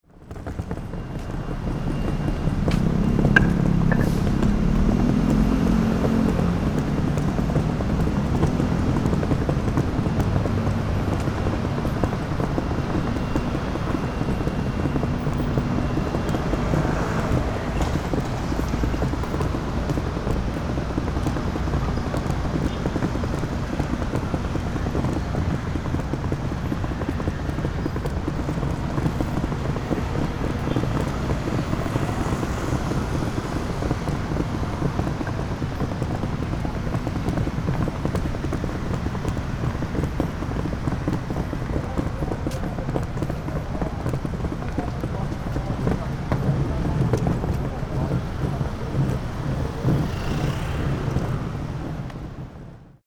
Kaohsiung Station, Kaohsiung City - Hand luggage
Hand luggage in the old Railway Station Square, Sony PCM D50
苓雅區 (Lingya), 高雄市 (Kaohsiung City), 中華民國, 5 April 2012